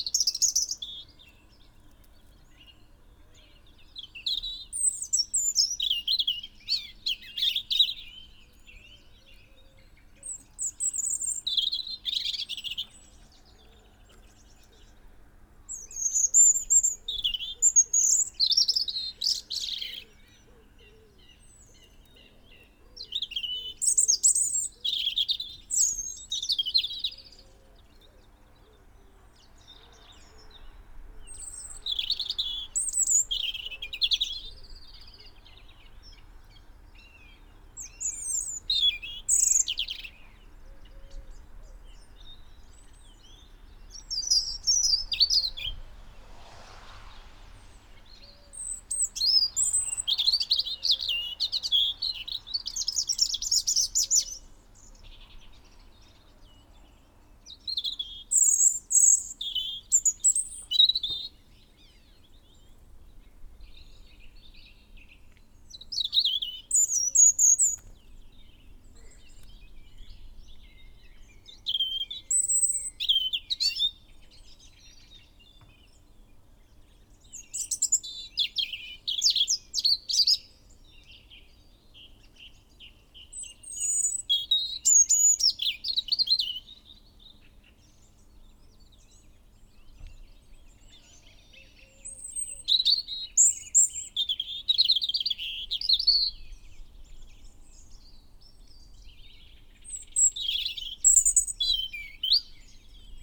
robin song ... zoom h5 and dpa 4060 xlr ... lav mics clipped to twigs ... bird calls ... song ... blackbird ... crow ... blue tit ... pheasant ... wren ... song thrush ... background noise ...